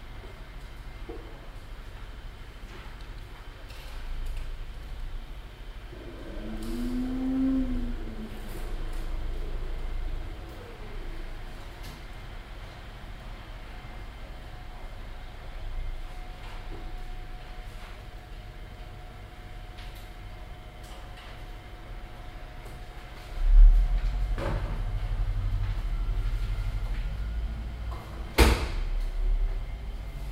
cologne, south, ubierring, sb wäscherei
soundmap: cologne/ nrw
sb wäscherei am ubierring, köln sued, mittags
project: social ambiences/ listen to the people - in & outdoor nearfield recordings